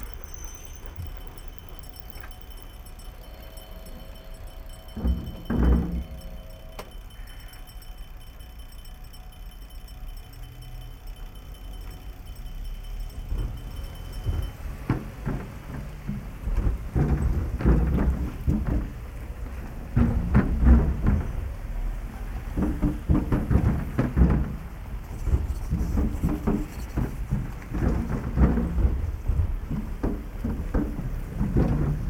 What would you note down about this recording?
Railway crossing. Железнодорожный переезд, предупреждающий сигнал и проезд поезда.